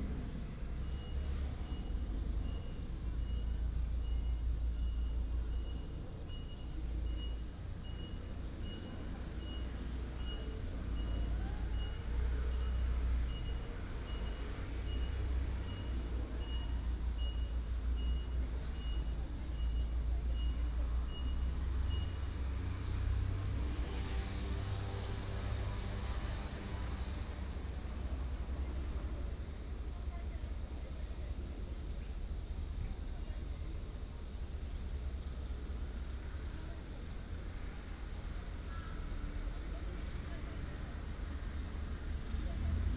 龍德公園, Daxi Dist. - small park
Small park, The plane flew through, traffic sound, birds sound
Zhongli District, Taoyuan City, Taiwan